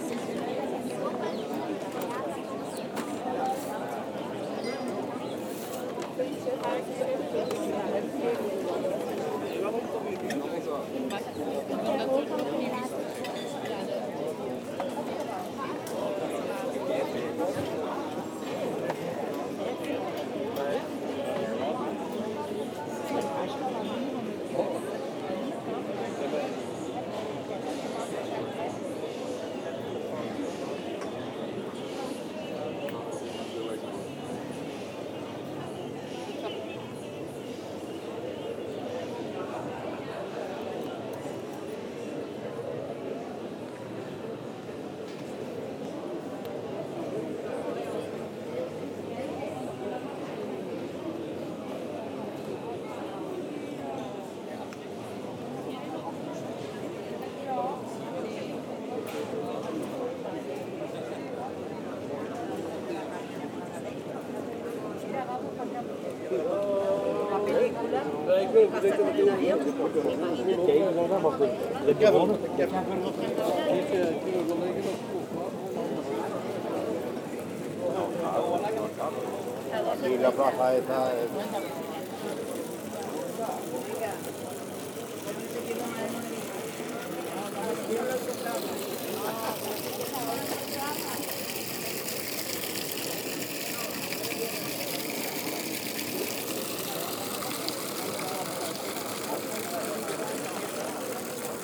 Leuven, Belgique - Prepairing the terraces
Waiters prepairing the bars terraces for a long sunny saturday afternoon, people discussing, a few sparrows in the trees.
Leuven, Belgium, 13 October